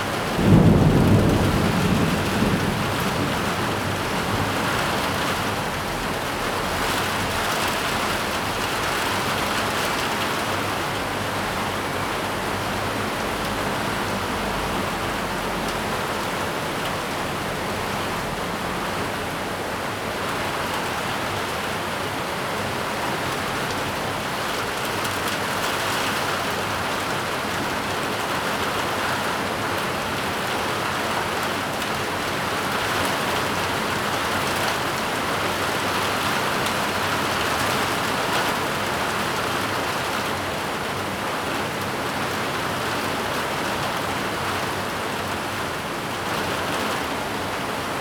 Daren St., Tamsui District - Thunderstorms
Heavy rain, Thunderstorms
Zoom H2n MS +XY
New Taipei City, Taiwan